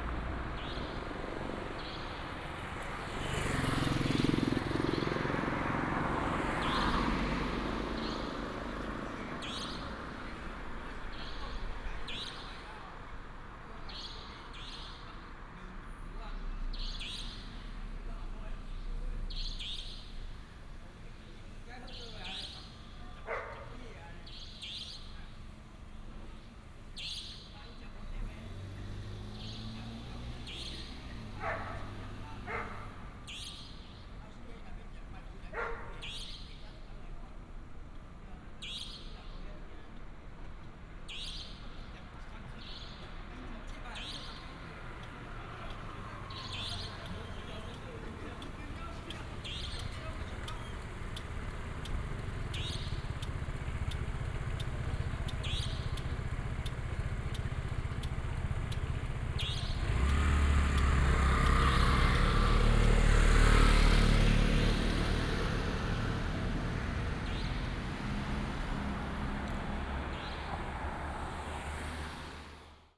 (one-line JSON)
{"title": "Huanya, Yanshui Dist., Tainan City - at the intersection", "date": "2018-05-07 19:33:00", "description": "At the intersection, Bird call, Traffic sound, Dog barking\nBinaural recordings, Sony PCM D100+ Soundman OKM II", "latitude": "23.29", "longitude": "120.24", "altitude": "9", "timezone": "Asia/Taipei"}